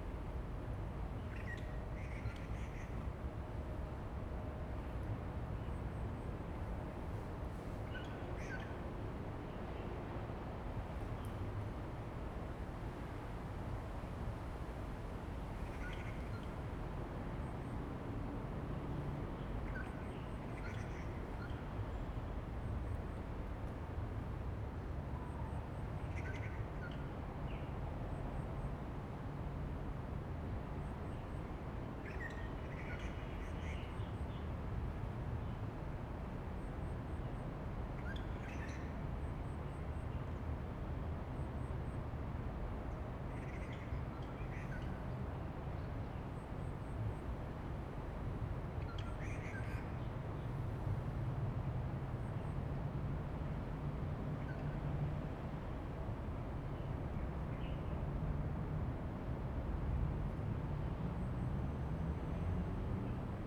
臺北機廠, Taiwan - Birdsong
Factory in this area in the future will be demolished, Disused railway factory
Zoom H2n MS + XY
26 September 2014, ~15:00